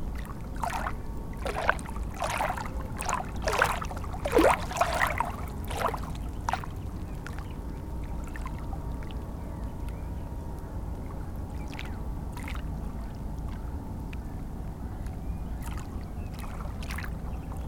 Aizier, France - Seine river
Recordinf of the very small waves of the Seine river in Aizier, in a bucolic place.
22 July 2016